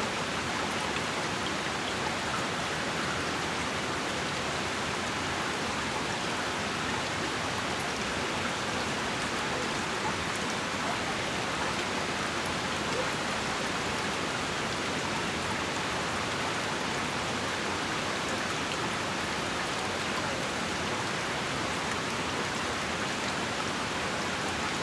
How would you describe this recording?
Sounds from the waterfall part of Greenacre Park, Manhattan. Recorded at the entrance of the park.